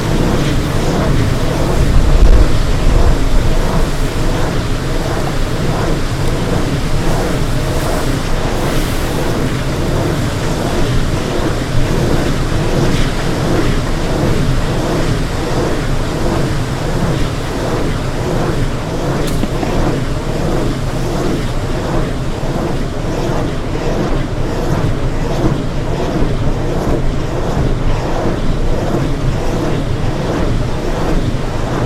Recorded on a windy day at the wind turbine up at Vårdkasen in Härnösand. The recording was made with two omnidirectional microphones